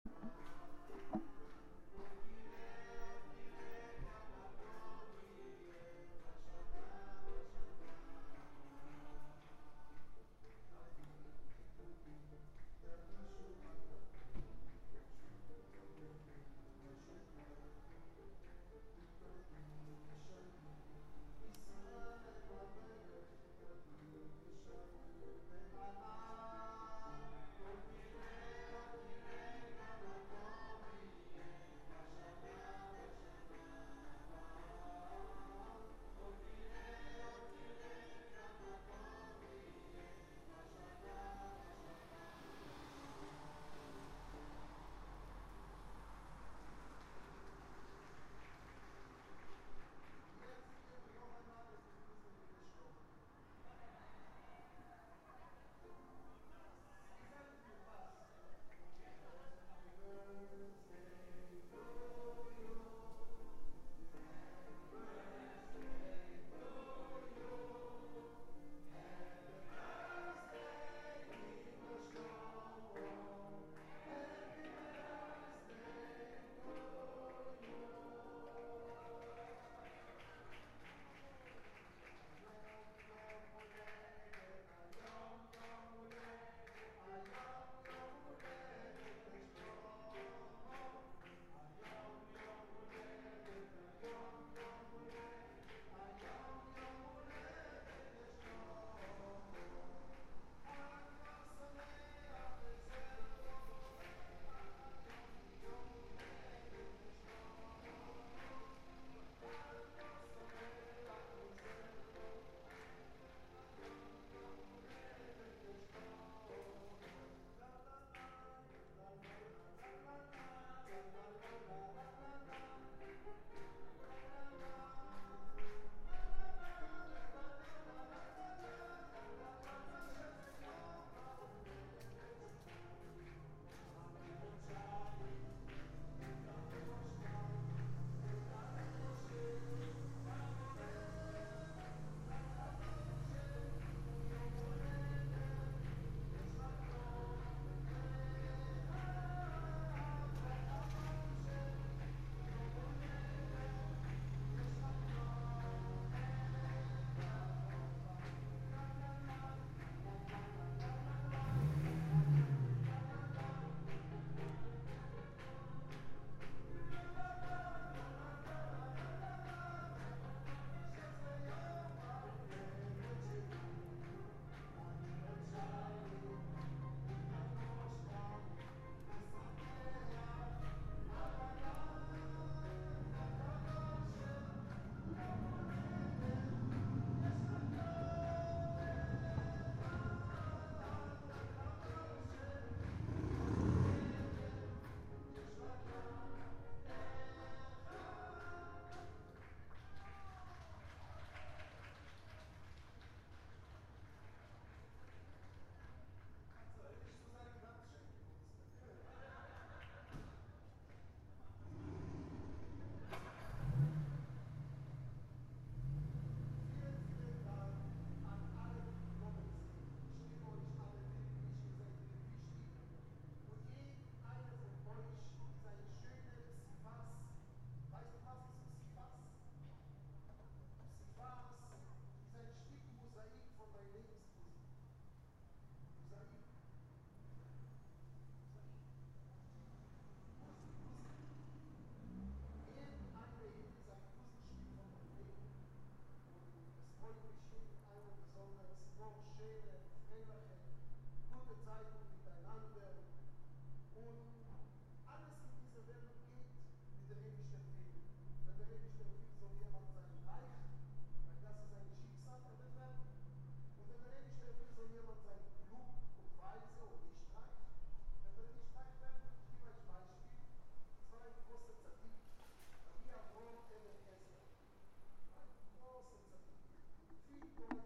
Rosch ha-Schana Frankfurt am Main, Deutschland - Rosch ha-Schana Son
Singing of a song for the jewish new year (rosch ha-schana) and two birthday songs. The recording is rather remote, you hear the echoes of the street, car noises and so on - the second part is the file next to this one...